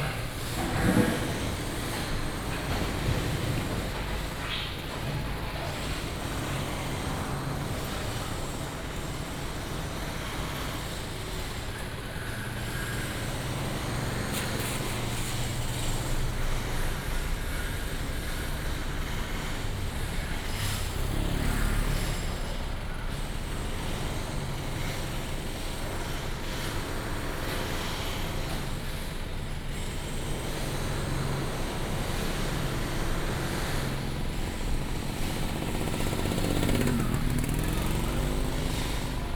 Ln., Sec., Heping E. Rd., Da’an Dist. - Construction Sound
Traffic Sound, Construction Sound, Building site, Building demolition renovation
Sony PCM D50+ Soundman OKM II